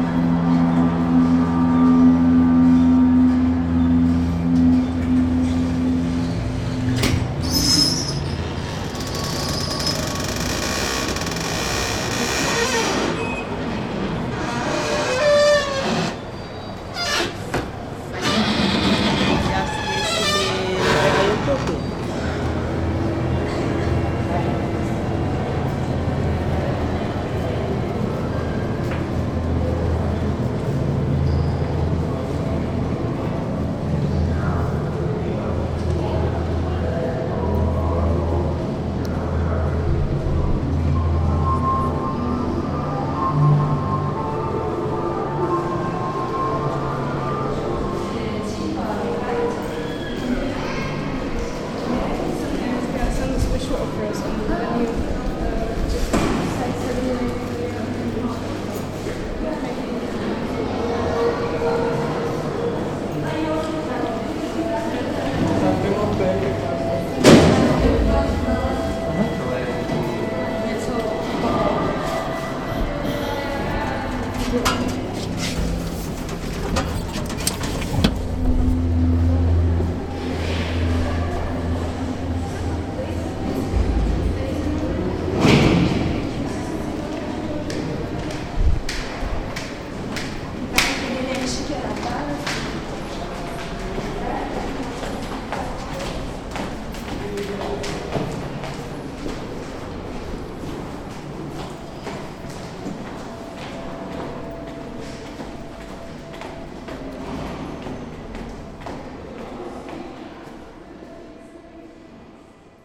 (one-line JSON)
{"title": "Veletrzni palac, PQ exhibition", "date": "2011-06-24 00:20:00", "description": "walk around the Prague Quadrienale exhibition at the Veletrzni palace, where is the National Gallery collection of modern and contemporary art.", "latitude": "50.10", "longitude": "14.43", "altitude": "216", "timezone": "Europe/Prague"}